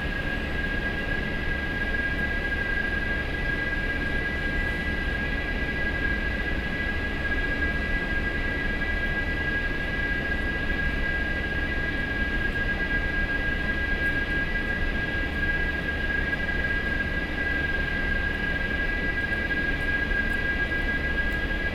National Taiwan University Hospital Station, Taipei - air conditioning noise
outside of the MRT station, air conditioning noise, Sony PCM D50 + Soundman OKM II
台北市 (Taipei City), 中華民國, 1 May 2013